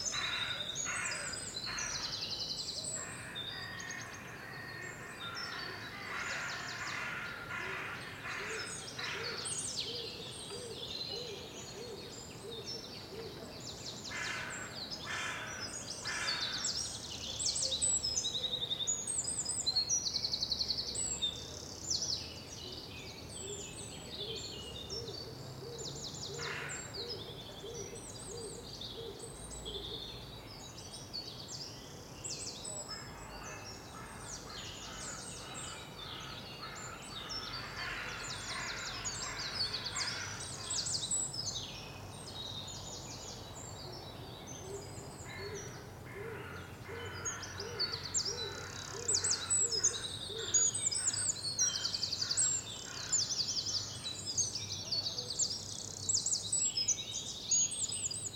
Avenue Nekkersgat, Uccle, Belgique - finally peace 5
Région de Bruxelles-Capitale - Brussels Hoofdstedelijk Gewest, België - Belgique - Belgien, 22 March 2020